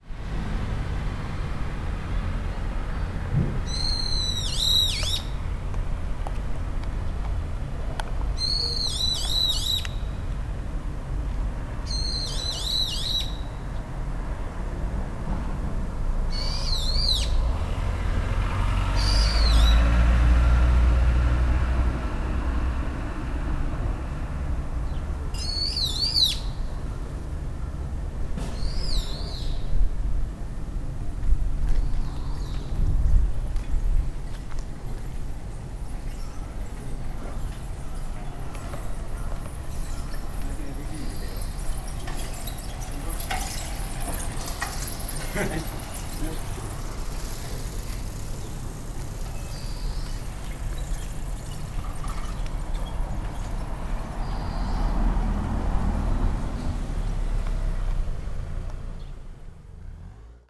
takasaki, small street, birds on wire
two birds chirping on a power wire, bicycle riders passing by - a car
international city scapes - social ambiences and topographic field recordings
3 August 2010, 13:22